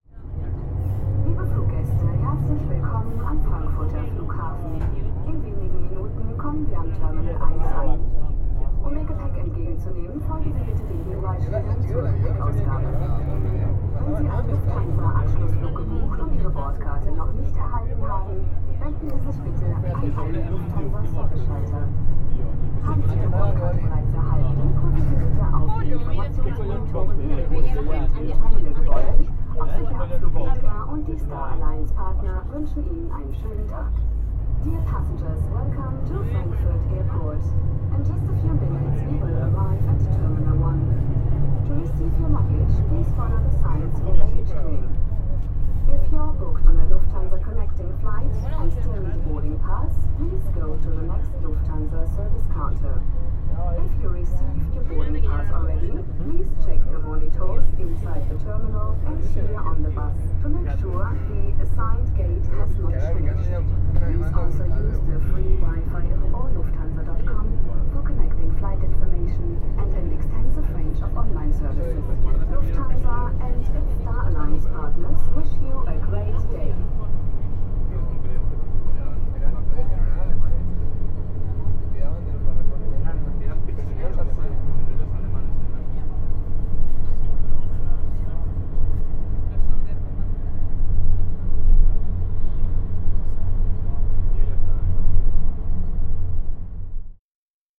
Airport shuttle bus, Frankfurt am Main, Germany - (394) Shuttle bus and announcements
Binaural recording inside a shuttle bus on the Frankfurt airport runway.
Recorded with Soundman OKM + Sony PCM D-100
Hessen, Deutschland, 12 November 2018